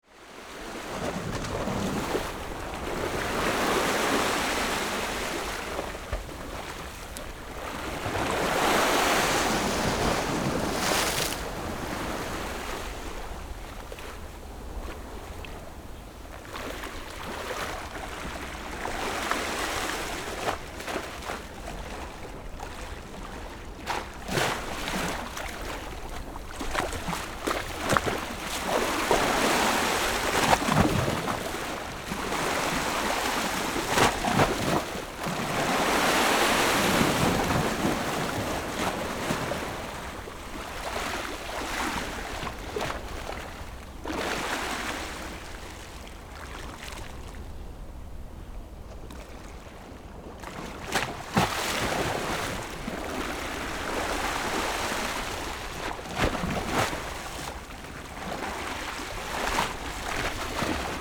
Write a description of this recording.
Sound of the waves, Small port, Pat tide dock, Zoom H6 +Rode NT4